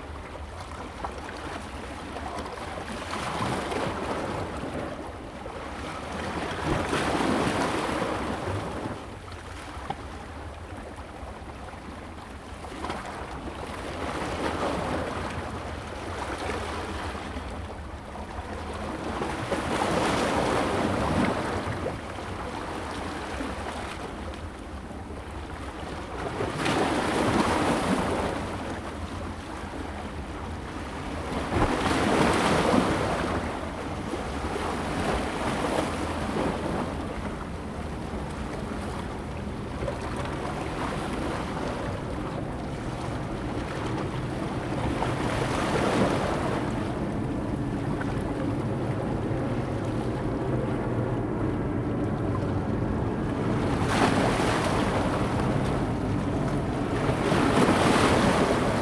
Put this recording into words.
at the seaside, Waves, High tide time, Wave block, Zoom H2n MS+XY